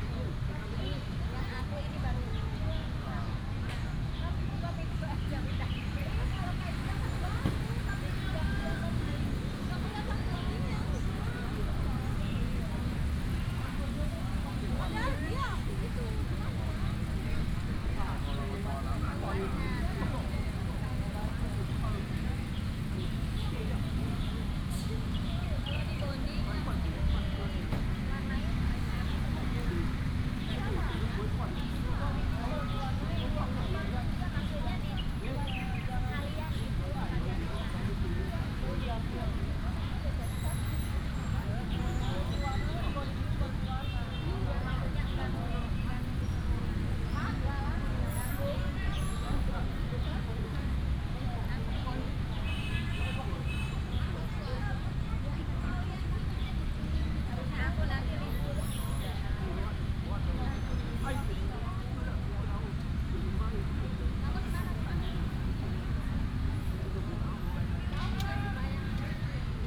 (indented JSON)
{
  "title": "文昌公園, East Dist., Chiayi City - in the Park",
  "date": "2017-04-18 09:53:00",
  "description": "in the Park, Traffic sound, Bird sound, The voice of the market",
  "latitude": "23.48",
  "longitude": "120.46",
  "altitude": "42",
  "timezone": "Asia/Taipei"
}